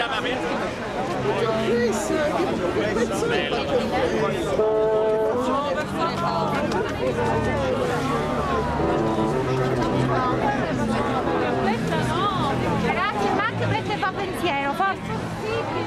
{"title": "claim music", "description": "orchestrals protesting for own rights in front of their theatre\n20/03/2009", "latitude": "38.12", "longitude": "13.36", "altitude": "27", "timezone": "Europe/Berlin"}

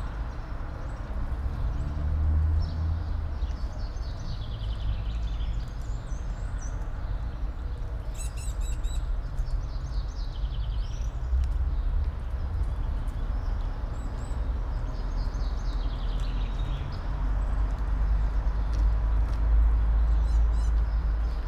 {"title": "all the mornings of the ... - apr 26 2013 fri", "date": "2013-04-26 07:10:00", "latitude": "46.56", "longitude": "15.65", "altitude": "285", "timezone": "Europe/Ljubljana"}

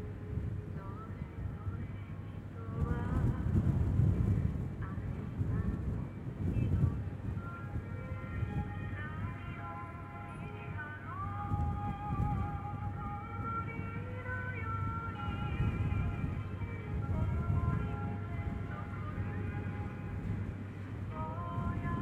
{"title": "Soyamisaki, Hokkaido, Japan - The very windy north of Japan", "date": "2015-05-07 11:08:00", "description": "At the north end of Hokkaido island, a very windy place and a sounding sculpture.", "latitude": "45.52", "longitude": "141.94", "altitude": "4", "timezone": "Asia/Tokyo"}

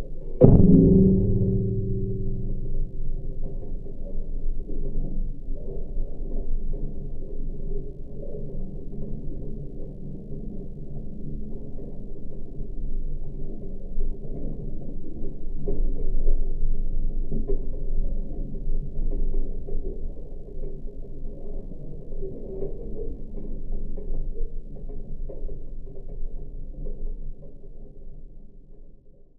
{"title": "Kaunas, Lithuania, flagpole", "date": "2021-08-17 17:15:00", "description": "High flagpole at Kaunas castle. Geophone contact recording.", "latitude": "54.90", "longitude": "23.88", "altitude": "25", "timezone": "Europe/Vilnius"}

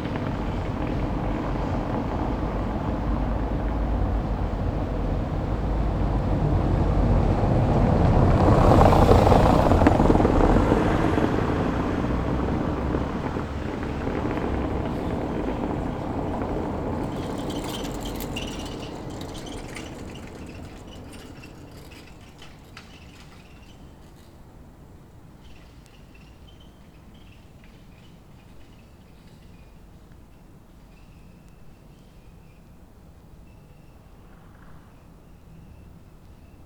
{"title": "Berlin: Vermessungspunkt Friedel- / Pflügerstraße - Klangvermessung Kreuzkölln ::: 12.08.2010 ::: 03:05", "date": "2010-08-12 03:05:00", "latitude": "52.49", "longitude": "13.43", "altitude": "40", "timezone": "Europe/Berlin"}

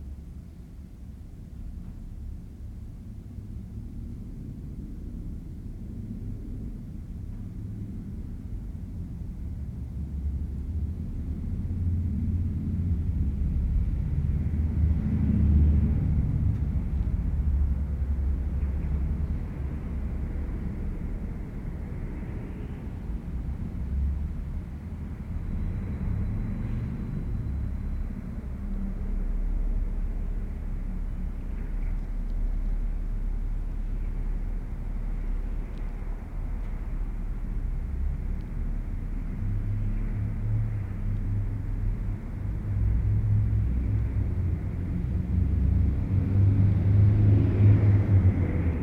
{"title": "Calgary +15 1st St SE bridge", "description": "sound of the bridge on the +15 walkway Calgary", "latitude": "51.04", "longitude": "-114.06", "altitude": "1061", "timezone": "Europe/Tallinn"}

